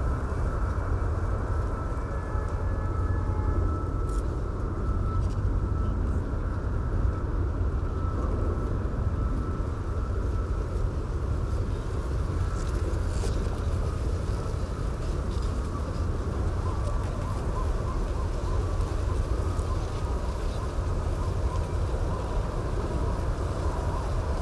wind in reeds, container terminal in background